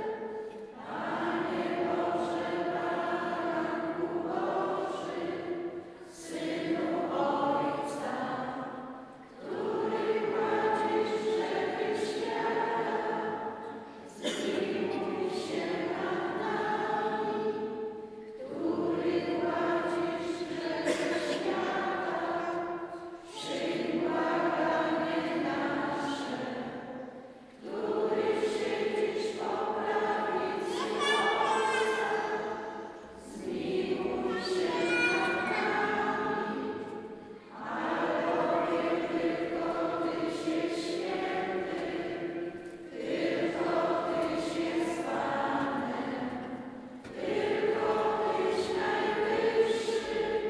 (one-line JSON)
{"title": "Parkowa, Sokołowsko, Poland - Nedělní mše v Kościółe pw. Matki Bożej Królowej Świata", "date": "2019-08-18 10:01:00", "description": "Recorded Sunday morning (on ZOOM H2N), during the art festival Sanatorium of Sound in Sokolowsko.", "latitude": "50.69", "longitude": "16.24", "timezone": "GMT+1"}